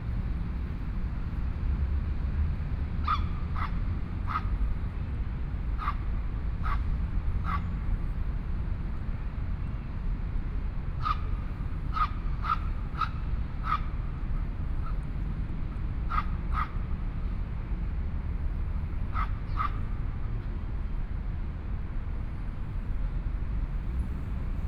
林森公園, Taipei City - Night park
Pedestrian, Traffic Sound, Dogs barking, Traffic Sound, Environmental sounds
Please turn up the volume a little
Binaural recordings, Sony PCM D100 + Soundman OKM II